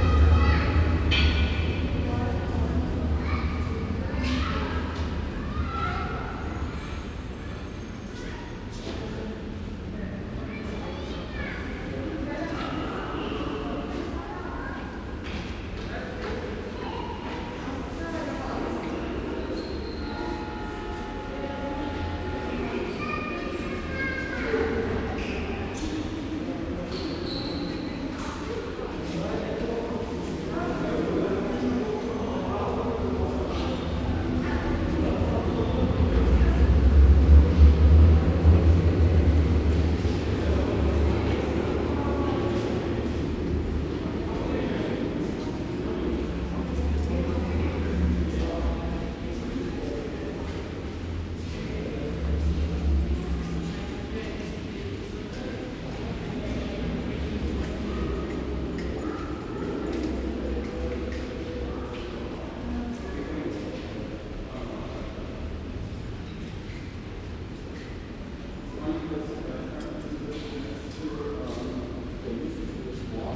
U Moritzplatz, Berlin, Germany - Moritzplatz underground mezzanine
A concrete cavern with tiled pillars that is both a subway for crossing the road and an entrance to the U-Bahn. There are not so many people for rush hour, maybe because of the Covid lockdown-light that currently rules Berlin. Suddenly two girls burst out laughing. They've been hiding from someone and jump out when he passes. I have been here often. It is one of the most inhospitable U-bahn stations in the city. But strangely compelling too.
Deutschland